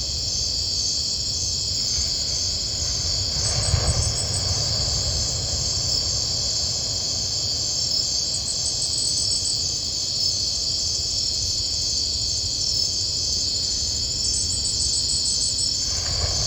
During the night in the Laguna of Chacahua. Some crickets are singing, sound of the pacific ocean in background.
Recorded by a binaural of 2 Sanken Cos11D on an Olympus LS5